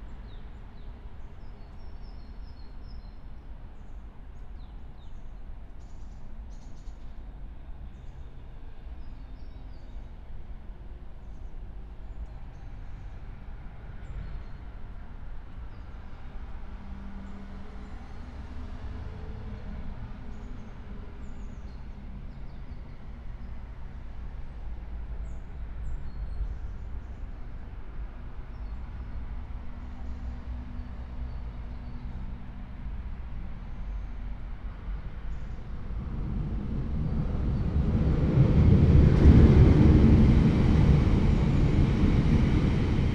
river Traun railway bridge, Linz - under bridge ambience
07:23 river Traun railway bridge, Linz
Oberösterreich, Österreich, 10 September 2020